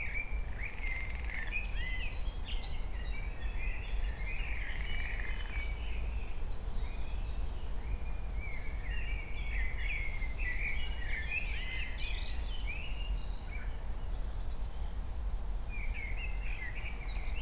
Königsheide, Berlin - forest ambience at the pond
12:00 drone, wind, Bells, birds, woodpecker
23 May 2020, 12:00